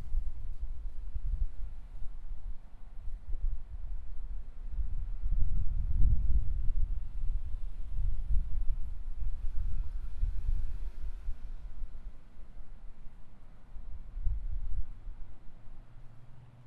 Buskirk Ave, Pleasant Hill, CA, USA - Pleasant Hill Parking Lot
Ambient sounds of cars passing on the freeway adjacent to the parking lot of a Best Buy, shopping carts and cars passing by, and the sound of wind.
Recorded on a Zoom H4n.